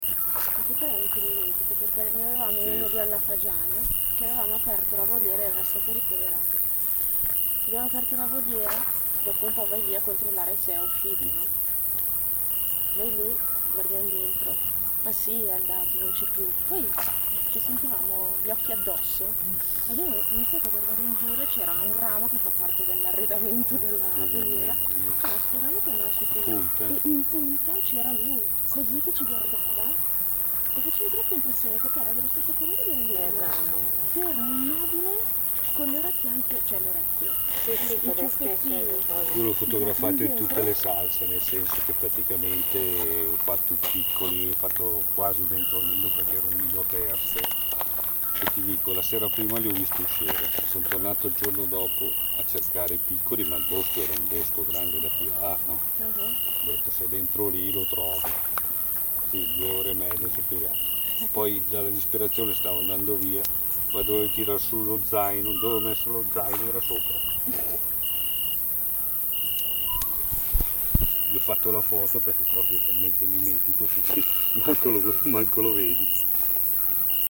Parabiago, MI, Italia - Assiolo al Parco del Roccolo
Assiolo al ponte di Ravello con racconti dei partecipanti al WLD 2012
Province of Milan, Italy, 18 July 2012, 22:32